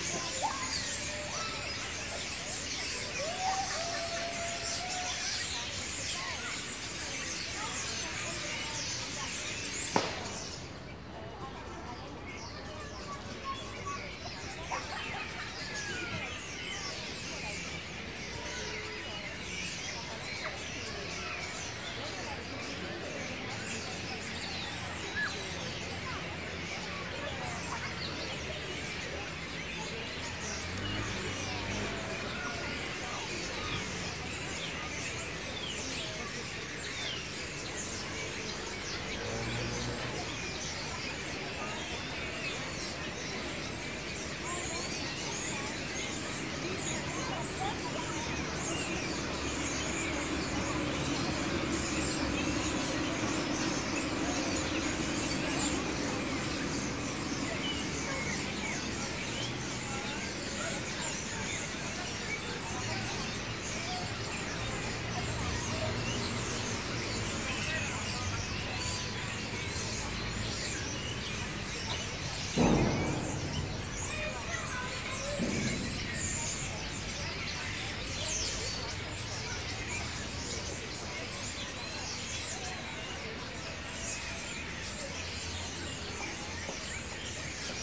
Sitting below a tree with the recorder pointing straight up at the noisy starlings. To the lef tyou can hear the children chirping and calling (and banging on the slide) and to the right you can hear the trams go by. Early in the recording is a loud bang which the starlings react to instantly.
(recorded with Zoom H4n internal mics)
Nice, France, February 2016